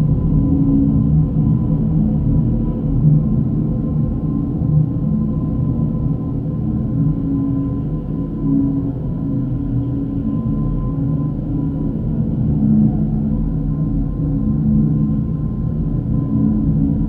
Antalieptė, Lithuania, churchs rain pipe
Another sound excursion with geophone. This time - the rain pipe of Antaliepte's church.